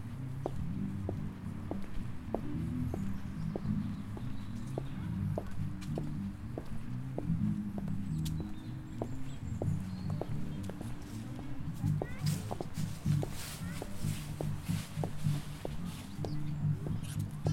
ein Sonntag mit Kunst im Marzili
jezt Kunst im Marzili 2011, Soundcheck und Sonnenbädeler und Vernissagler.innen in der Nachsaison. Der Nebel schwebt, die Sonne strahlt, der Rundgang kann beginnen.